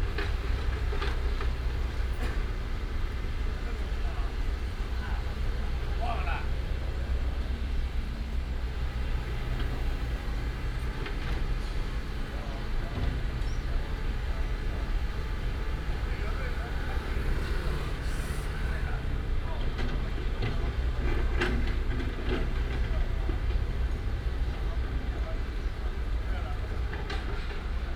立功社區, East Dist., Hsinchu City - Site construction sound
Site construction sound, traffic sound, Next to the old community, Binaural recordings, Sony PCM D100+ Soundman OKM II